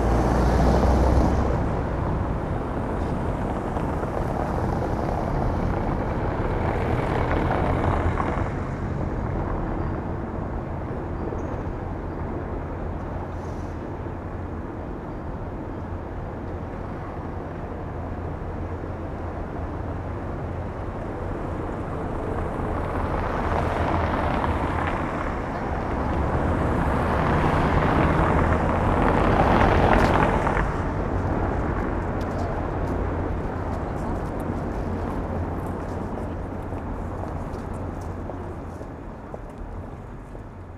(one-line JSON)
{"title": "Berlin: Vermessungspunkt Friedel- / Pflügerstraße - Klangvermessung Kreuzkölln ::: 19.12.2011 ::: 18:54", "date": "2011-12-19 18:54:00", "latitude": "52.49", "longitude": "13.43", "altitude": "40", "timezone": "Europe/Berlin"}